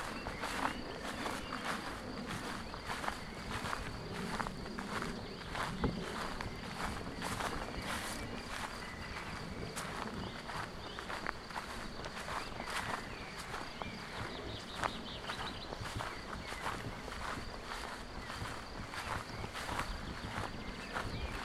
Meersburg - Deisendorf - Salem - A day in the wild Bodensee
A sound walk from the city sounds recorded in Meersburg, the choir was recorded in the Basilik of Birnau and the nature noises were recorded in the forest around Deisendorf, Salem and Illmensee, recorded and edited By Maxime Quardon